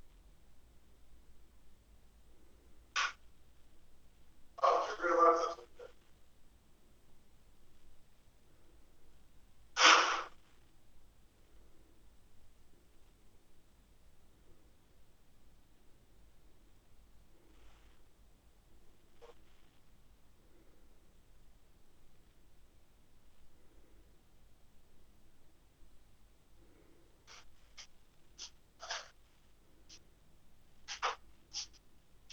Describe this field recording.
it's a recording of a phone call. My friend had to put away his phone but he didn't disconnect the call so I turned on the loud speaker on my phone and placed the recorder next to it. You can hear distorted conversations and noises form the reception desk at the Grand Theater in Poznan. There is speaker installed in the reception room and a microphone on the other side of a glass window. It's used to talk to the receptionist and it picks up all the sounds from the staircase, back entrance. You can hear sounds from this speaker as well. You will also notice the whole recording is choppy due to nosie gate effect commonly used by cell phone operators in order to remove background noise from the person who isn't talking. (sony d50)